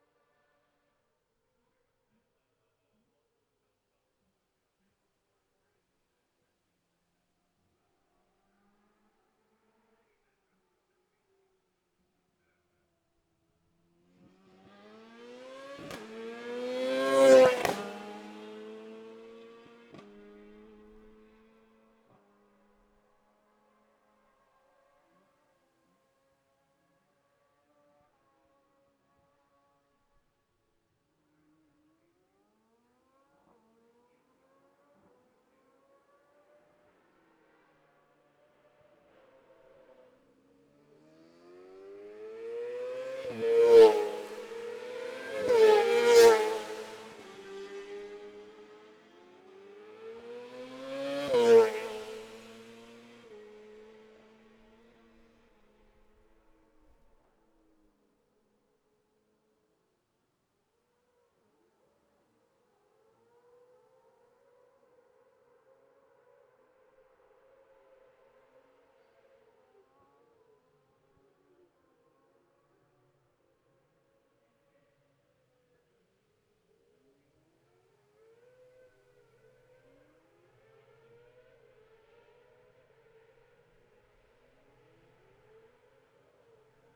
Gold Cup 2020 ... Sidecars practice ... dpa bag MixPre3 ...